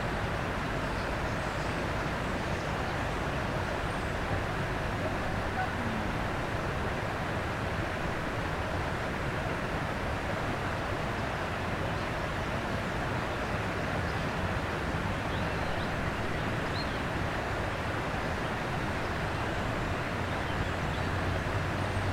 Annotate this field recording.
Près du Sierroz rassemblement d'étourneaux dans un grand peuplier.